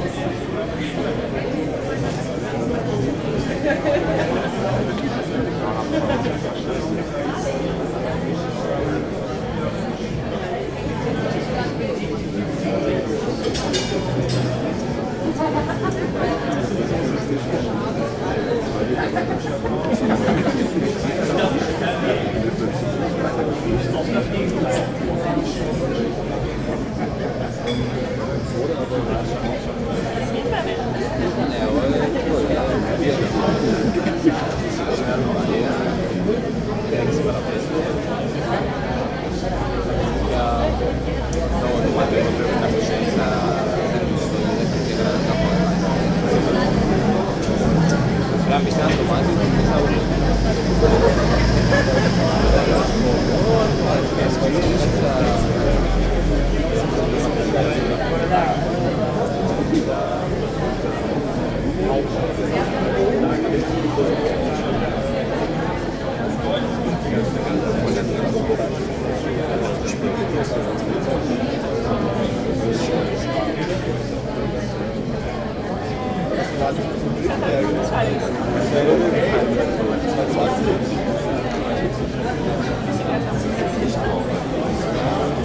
dieffenbachstraße: bürgersteig vor galerie baba berlin - the city, the country & me: pavement in front of the gallery baba berlin
pavement in front of the gallery baba berlin, opening of an exhibition, people talking
the city, the country & me: july 3, 2009
Berlin, Germany, 3 July, 23:31